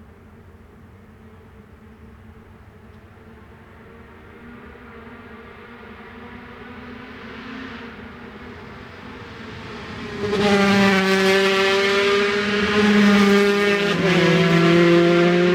Brands Hatch GP Circuit, West Kingsdown, Longfield, United Kingdom - british superbikes 2002 ... 125 ...
british superbikes 2002 ... 125 qualifying ... one point stereo to minidisk ...
England, United Kingdom, 15 June 2002